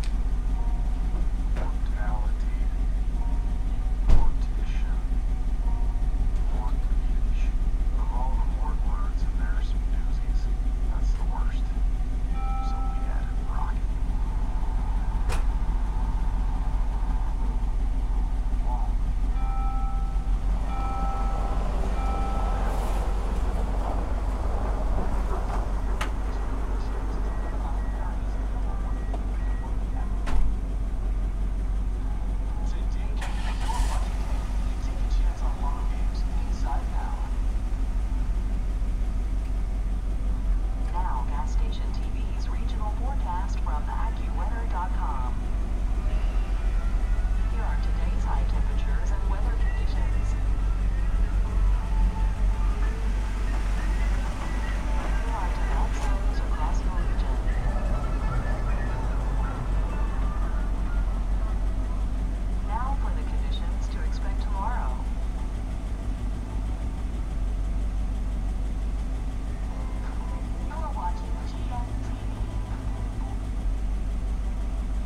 Pleasanton Hwy, Bear Lake, MI USA - Refueling, Video Reverb & Snowmobile Roaring
From pump 1, on the north side of the gas station, a video loop reverberates across the property as it issues forth from the other pumps' monitors. All is drowned out by the arrival and departure of snowmobiles. Boisterous young men can be heard. Other vehicles come, refuel and go. A late Saturday night at Saddle Up Gas & Grocery, on the east side of Bear Lake. Stereo mic (Audio-Technica, AT-822), recorded via Sony MD (MZ-NF810, pre-amp) and Tascam DR-60DmkII.